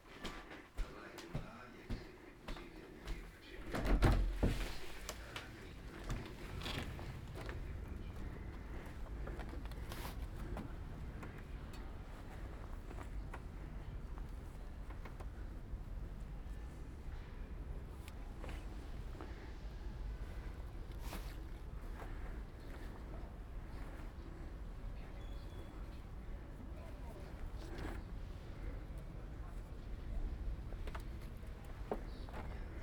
Ascolto il tuo cuore, città. I listen to your heart, city Chapter LXXXIV - Friday soundbike II on the banks of the Po River in the days of COVID19 Soundbike
"Friday soundbike II on the banks of the Po River in the days of COVID19" Soundbike"
Chapter LXXXIV of Ascolto il tuo cuore, città. I listen to your heart, city
Friday, July 3rd 2020. Biking on the bank of Po River, Valentino park, one hundred-fifteen days after (but day sixty-one of Phase II and day forty-eight of Phase IIB and day forty-two of Phase IIC and day 19th of Phase III) of emergency disposition due to the epidemic of COVID19.
Start at 4:28 p.m. end at 5:15 p.m. duration of recording 46’56”
The entire path is associated with a synchronized GPS track recorded in the (kmz, kml, gpx) files downloadable here:
Go to Chapter LXXXIV "Friday soundbike on the banks of the Po River in the days of COVID19" Soundbike", Friday, May 22th 2020. Similar path and time.
Piemonte, Italia